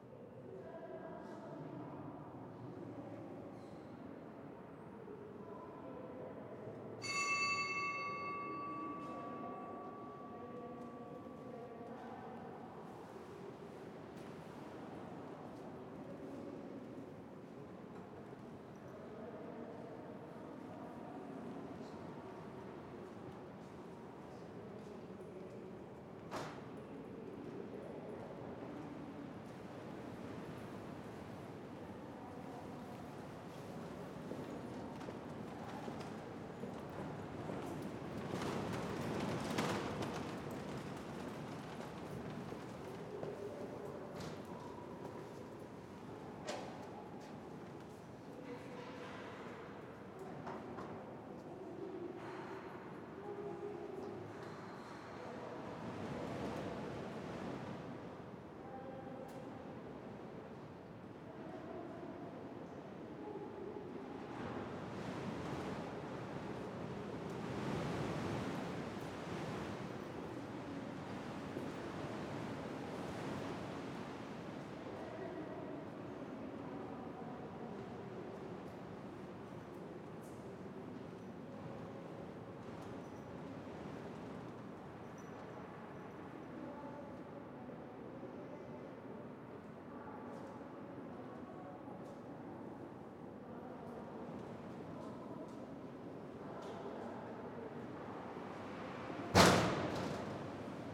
Rue des Tribunaux, Saint-Omer, France - St-Omer-Cathédrale
Cathédrale de St-Omer - intérieur
Jour de grand vent
ambiance.